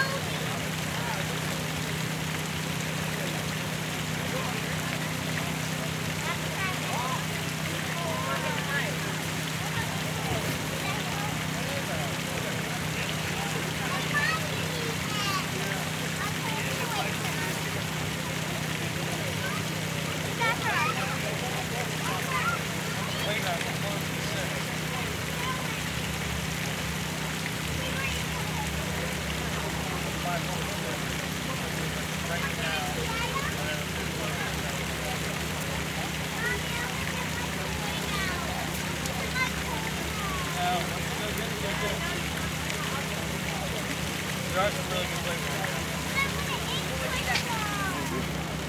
W 40th St, New York, NY, USA - Josephine Shaw Lowell Memorial Fountain
Sounds of water and kids running around the Josephine Shaw Lowell Memorial Fountain, Bryant Park.
United States, 1 April 2022